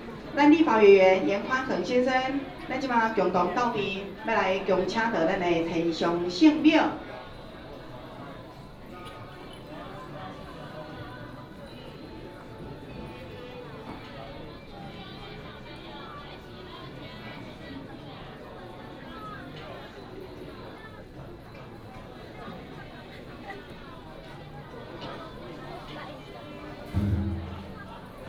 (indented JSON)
{
  "title": "Dajia Jenn Lann Temple, 台中市大甲區 - Temple ceremony",
  "date": "2017-03-24 14:16:00",
  "description": "Temple ceremony, The president of Taiwan participated in the temple ceremony",
  "latitude": "24.35",
  "longitude": "120.62",
  "altitude": "56",
  "timezone": "Asia/Taipei"
}